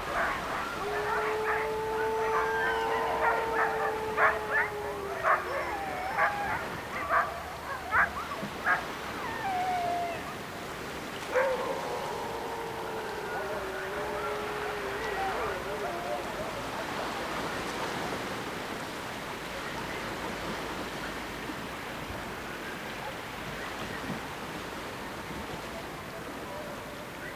Oqaluffiup Aqq., Ilulissat, Groenland - sea dog man
rec near the Zion Baptist church on the waterfront. you can hear the sound of water, dogs and a man minding his little boat